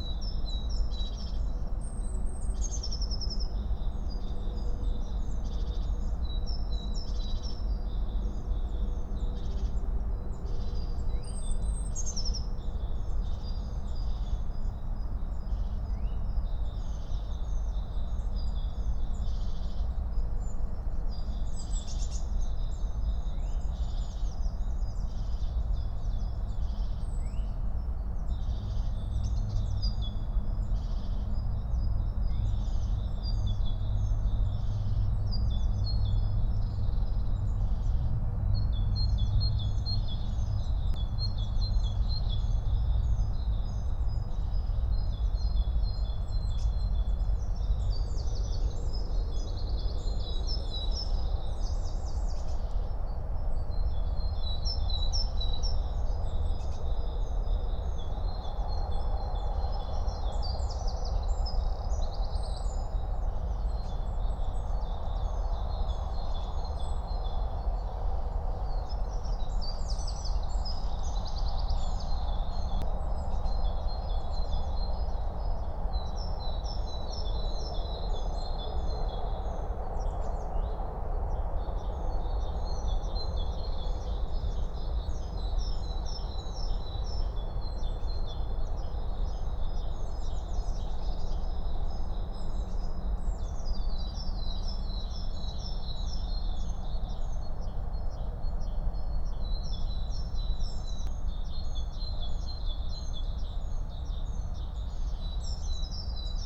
Berlin, NSG Bucher Forst - Bogensee, forest pond ambience
(remote microphone: AOM5024/ IQAudio/ RasPi Zero/ LTE modem)